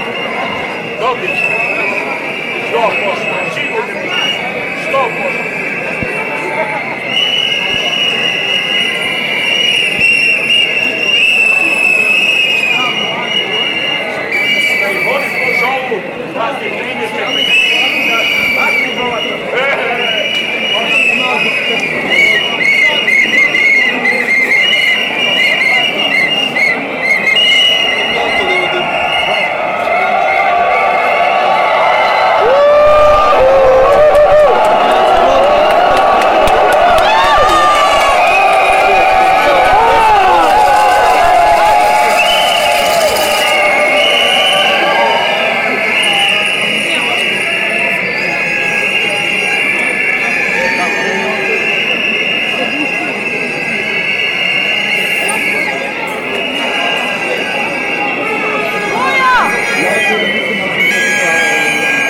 Protests in Zagreb, 3 March (1) - against institutional corruption
up to 10.000 protesters demanding elections and shouting: everybody to the streets! let's go to the HDZ headquarters! (HDZ is the rulling conservative party)